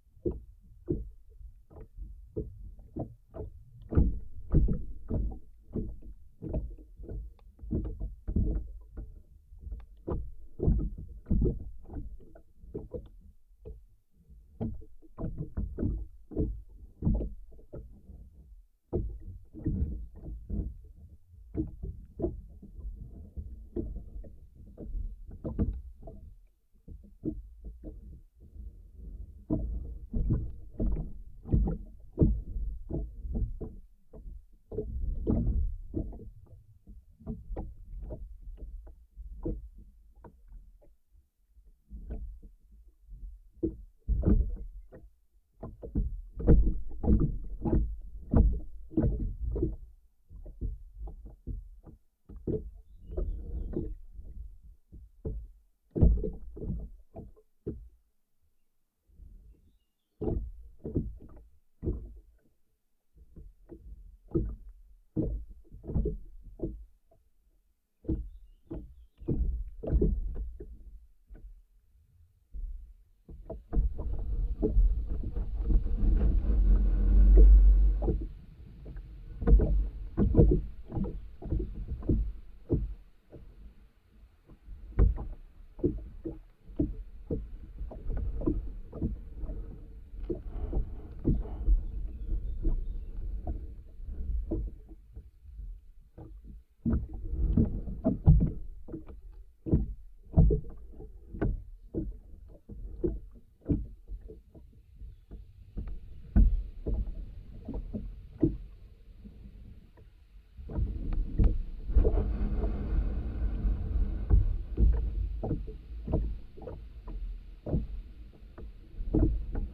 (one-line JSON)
{"title": "Šlavantai, Lithuania - A boat swaying in the water", "date": "2019-06-28 11:30:00", "description": "Dual contact microphone recording of a wooden boat being gently swayed by the water. Some environment sounds - wind, birds chirping - also come through a bit in the recording, resonating through the boat surface.", "latitude": "54.16", "longitude": "23.65", "altitude": "127", "timezone": "Europe/Vilnius"}